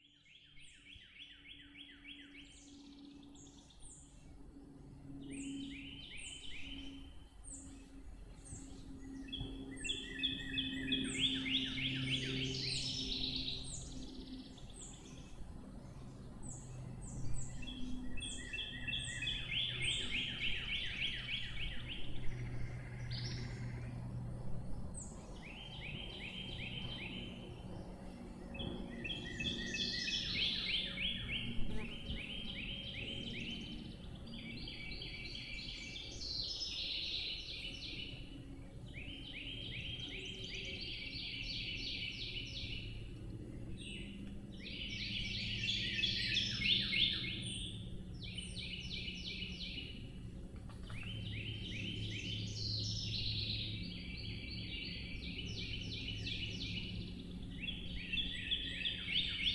Lost Sister Trail, Francis Slocum State Recreation Area, Peru, IN, USA - Birdsong and motorboats, Lost Sister Trail, Francis Slocum State Recreation Area
Birdsong and motorboats heard on Lost Sister Trail in the Francis Slocum State Recreation Area. Recorded using a Zoom H1n recorder. Part of an Indiana Arts in the Parks Soundscape workshop sponsored by the Indiana Arts Commission and the Indiana Department of Natural Resources.